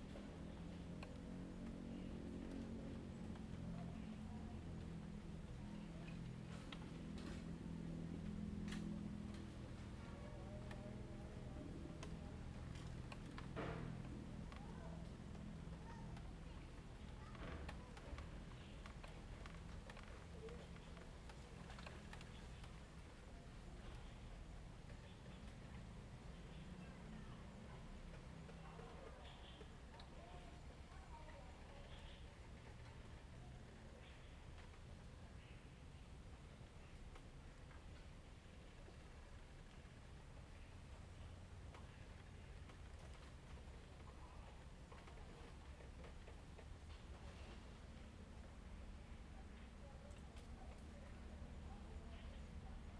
碧雲寺竹林生態池, Hsiao Liouciou Island - In bamboo
In bamboo
Zoom H2n MS +XY